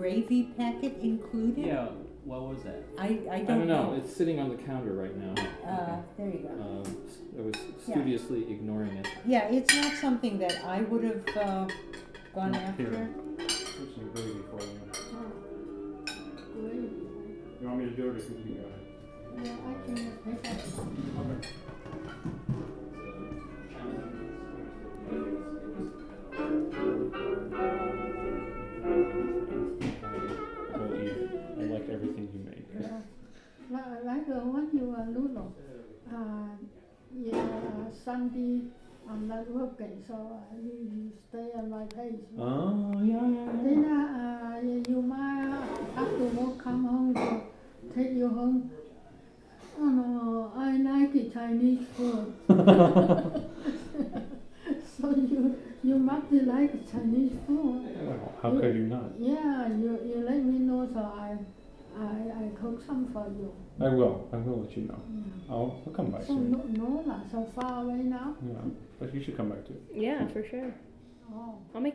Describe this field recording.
walking around at dinner in oak park